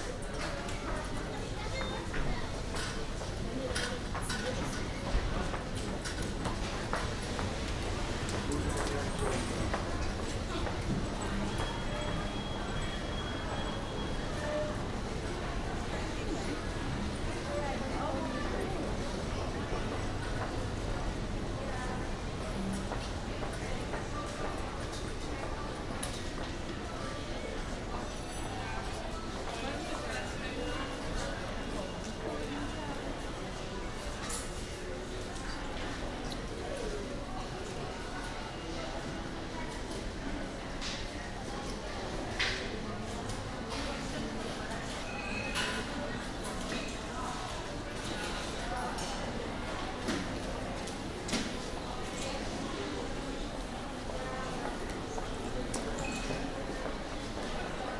Customers and employees of department store De Bijenkorf.
Recorded as part of The Hague Sound City for State-X/Newforms 2010.